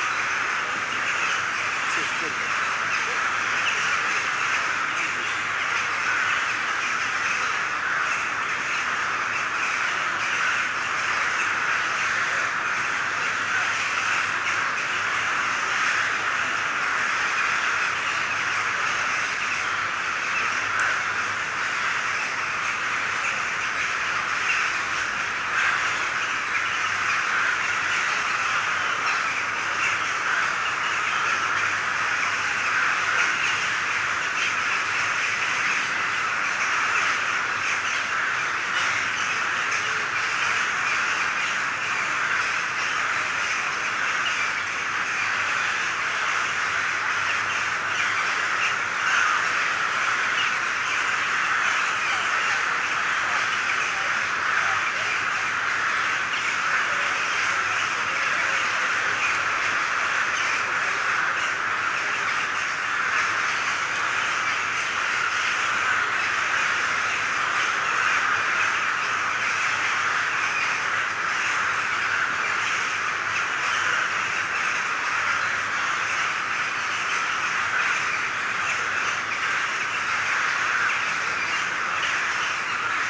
Park Sismigiu, Bucharest - Crows in the park at the end of the afternoon
Hundreds of crows screaming in the park Sismigiu at the end of the afternoon.
Some background noise from the city, sirens sometimes and some people walking around sometimes in the park.
București, Romania, 20 July 2018, 19:00